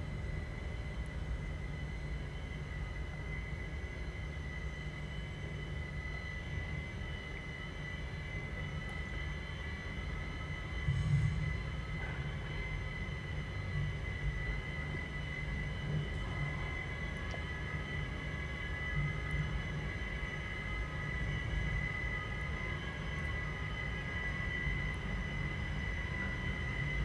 Eferdinger Str., Linz, Austria - 3 sound layers under the bridge - thumping trams, speedboats underwater, creaking moorings
The sound under the Nieblungen bridge can be spectacular. Trams thump and roar as their weight makes the whole structure reverberate and cars rock over the joints in particular spots. But it's not only what can be heard with human ears. Contact mics pick up the creaks and strains in metal mooring ropes that hold landing stages and large riverboats to the shore. And hydrophones can listen into the sound of tourist speedboats from underwater as they zip past. This latter is a loud, persistent (it never completely disappears), cutting whine, an intensely irritating drilling in your ear - real underwater sonic pollution. It can't be much fun being a fish in this part of the river. The recording mixes all these layers together. They were recorded simultaneously in sync.
11 September 2020, 12:34